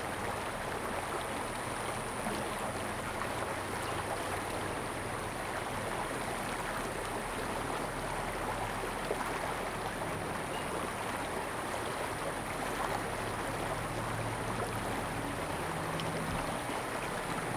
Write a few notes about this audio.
Brook, In the river, stream, traffic sound, birds, Zoom H2n MS+XY